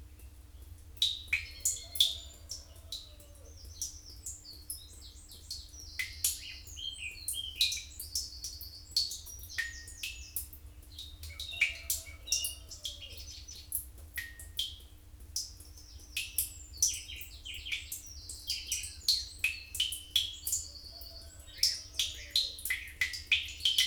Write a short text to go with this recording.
Large water butt ... condensation running down a down pipe ... drops into not much water ...had been listening/finding out about suikinkutsu sounds ... lavalier mics used to record ... bird song ... wren ... song thrush ...